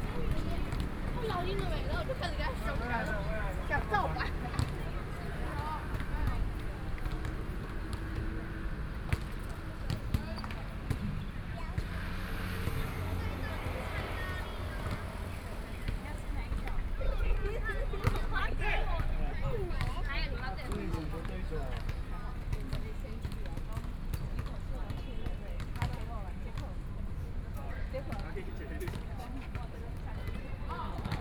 Dayong Rd., Yancheng Dist. - At the roadside
At the roadside, Play basketball, The pedestrian, Traffic Sound